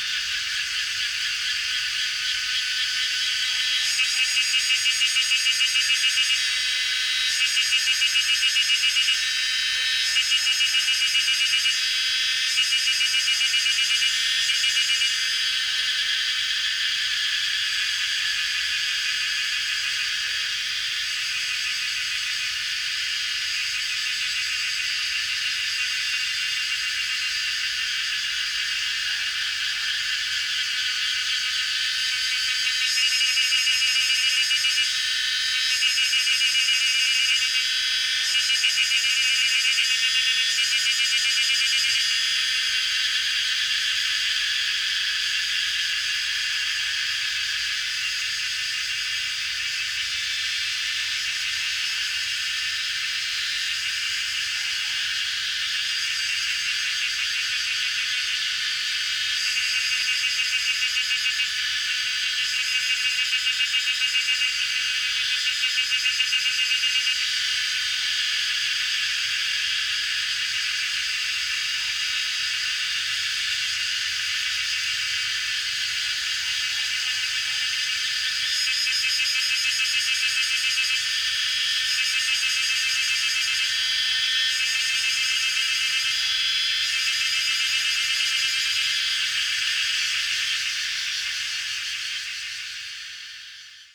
Cicada sounds, In the bamboo forest, Dogs barking
Zoom H2n MS+XY